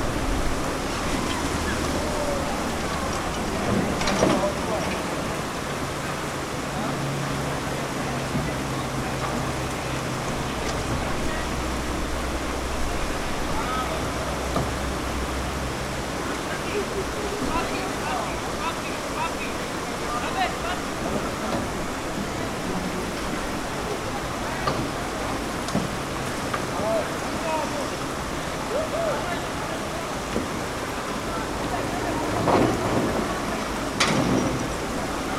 Český Krumlov, Tschechische Republik, Baustelle & kenternde Boote - baustelle & kenternde boote
Český Krumlov, Baustelle & kenternde Boote